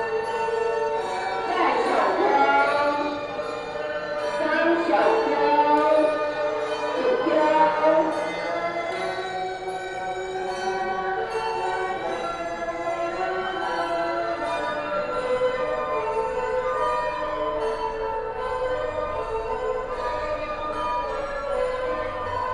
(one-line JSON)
{"title": "壯圍番社同安廟, Zhuangwei Township, Yilan County - Inside the temple", "date": "2016-11-18 14:55:00", "description": "Inside the temple", "latitude": "24.73", "longitude": "121.82", "altitude": "5", "timezone": "Asia/Taipei"}